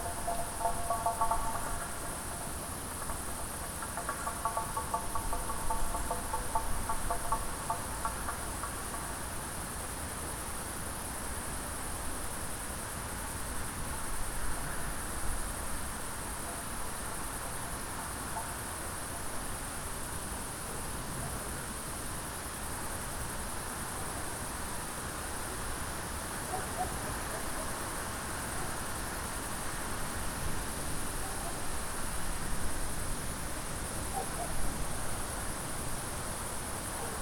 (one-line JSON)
{"title": "path of seasons, august forest, piramida - wind rattle, crickets", "date": "2014-08-11 21:15:00", "description": "evening forest ambience", "latitude": "46.58", "longitude": "15.65", "altitude": "376", "timezone": "Europe/Ljubljana"}